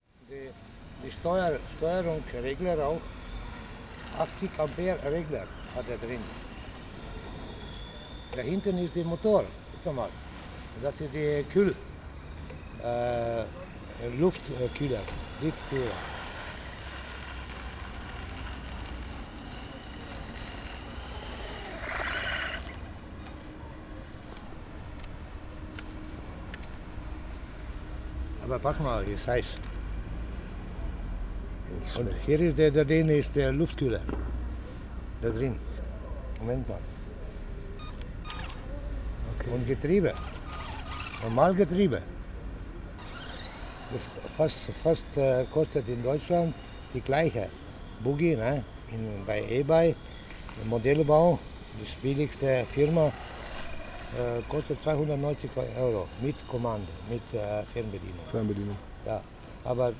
Maribor, Pristaniska ulica - model car specialist explains
the pilot explains some details about the control cars
Maribor, Slovenia, 1 August 2012, 19:10